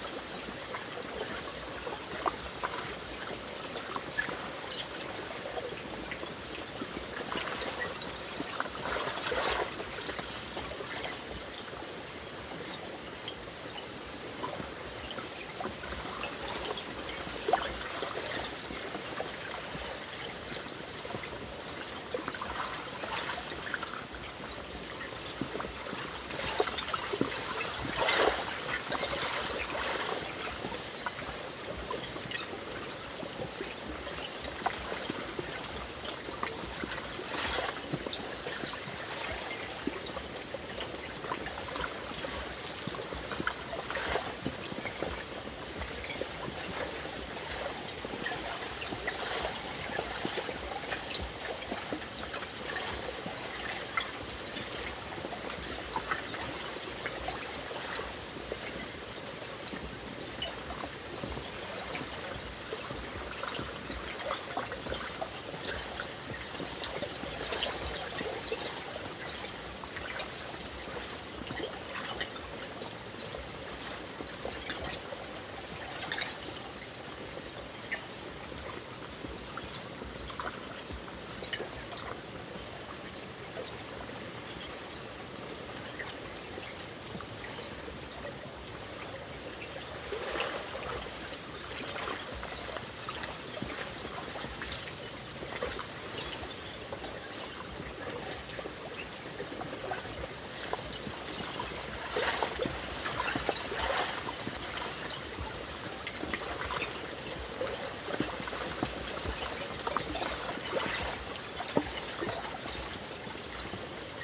lake Ekoln is full of tiny ice shards, chiming and jingling as they are being washed out on the beach.
recorded with Zoom H2n set directly on a rock, 2CH, windscreen. postprocessed with slight highpass at 80 Hz.
Svealand, Sverige, 9 February, 10:32